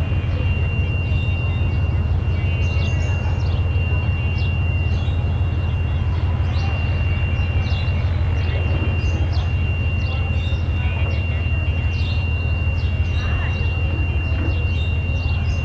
Teslaradio, World Listening Day, Málaga, Andalucia, España - Locus Sonus #WLD2013
Locus Sonus WLD2013 es un pieza de 42 minutos que se realizó en directo los pasados dias 17 y 18 de Julio de 2013 con motivo de la semana de la escucha, transmisiones enmarcadas dentro del World Listening Day.
Utilicé los recursos sonoros del nodo Locus Sonus Malaga Invisible, el cual coordino y recursos de otros nodos de este proyecto asi como de Radio Aporee, mezclándolos en tiempo real con otras fuentes libres que se producian en esos instantes en la red.
+ info en:
España, European Union, 18 July 2013, 22:30